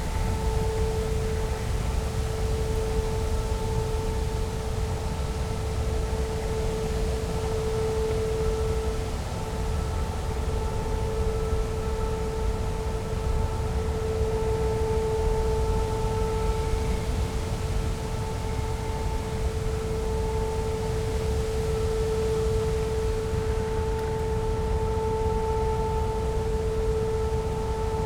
Garzweiler II, Germany - terminal, embarking point, ambience
soundscape near Garzweiler brown coal mining, wind in trees
(SD702, DPA4060)